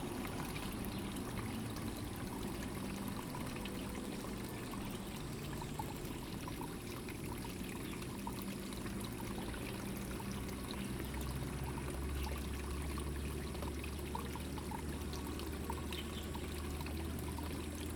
Lane TaoMi, Puli Township - The sound of water
The sound of water, Bird calls
Zoom H2n MS+XY